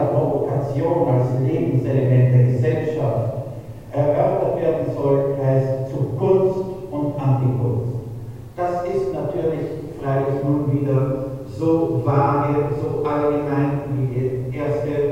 museum castle moyland, beuys archive
On the first floor of the castle inside one of the towers of the Museum Moyland. The sound of a video showing a podium discussion about art and provocation involving J. Beuys from 1970.
soundmap d - topographic field recordings, art places and social ambiences